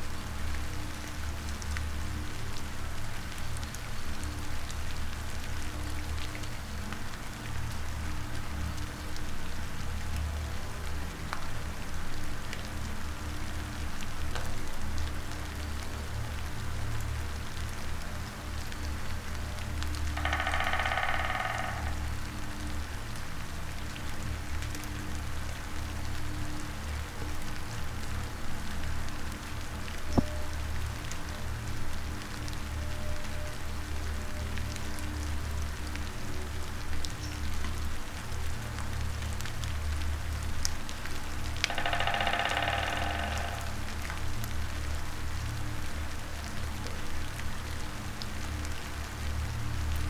small omni microphones in the well. usual drone, rain drops and black woodpecker in the distance.